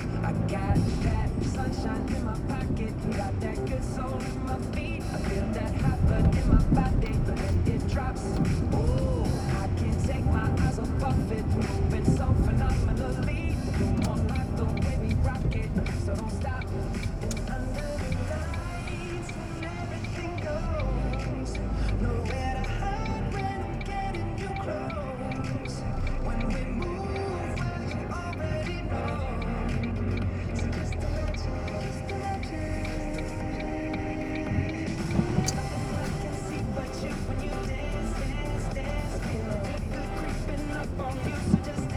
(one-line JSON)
{"title": "Kapela, Nova Gorica, Slovenija - Pridiga", "date": "2017-06-07 19:09:00", "description": "Sermon.\nRecorded with Sony PCM-M10", "latitude": "45.95", "longitude": "13.64", "altitude": "132", "timezone": "Europe/Ljubljana"}